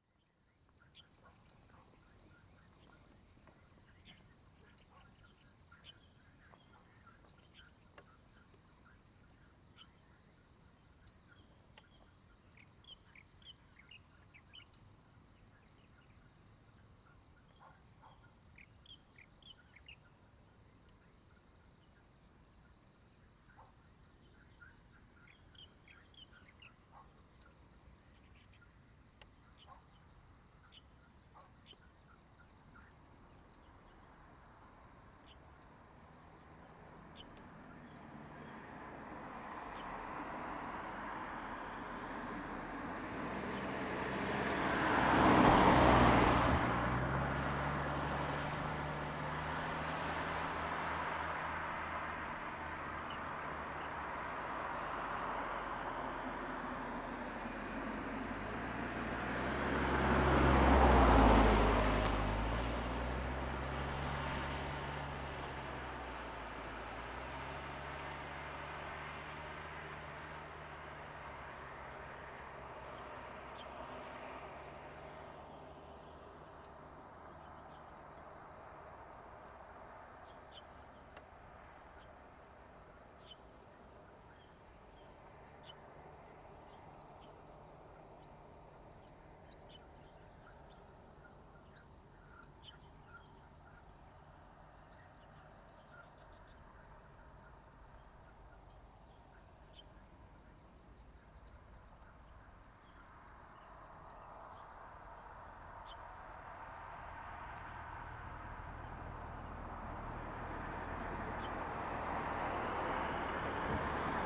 吳江村, Fuli Township - Birds and Traffic Sound
Birds singing, Traffic Sound, Near Highway
Zoom H2n MS+XY